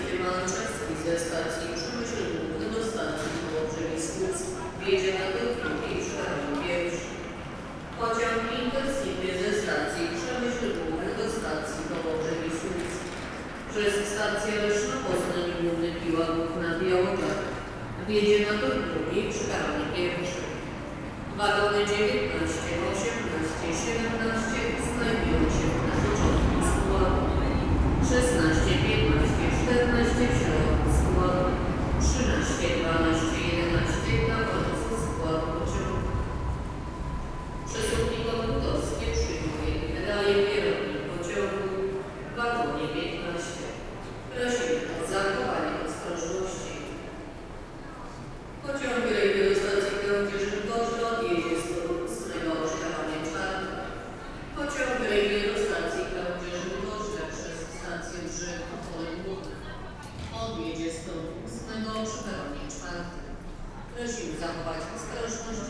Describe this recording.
Wrocław, Breslau, strolling around in Wrocław Główny main station, (Sony PCM D50, DPA4060)